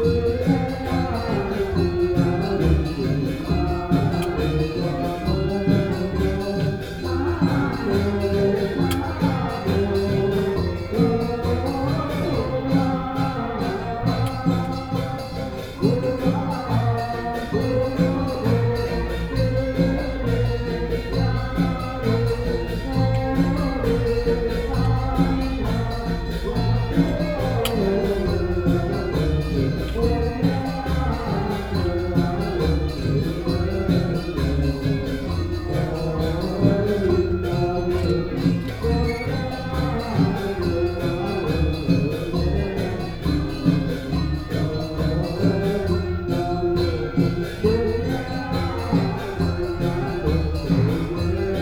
福神廟, Xihu Township - Dharma meeting
Dharma meeting, traffic sound, Binaural recordings, Sony PCM D100+ Soundman OKM II
19 September 2017, 15:21